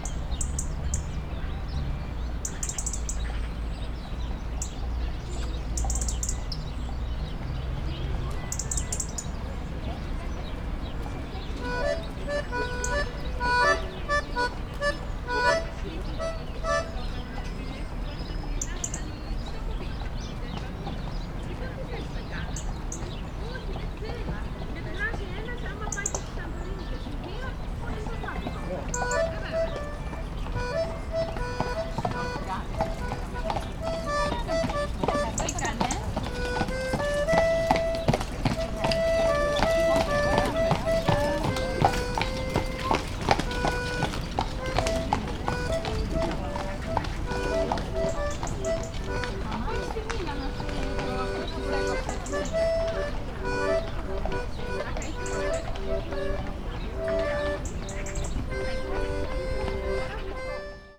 Athens, Dionysiou Areopagitou street - child accordion
a child timidly playing sparse melody on an accordion and begging for money. (sony d50)
Athina, Greece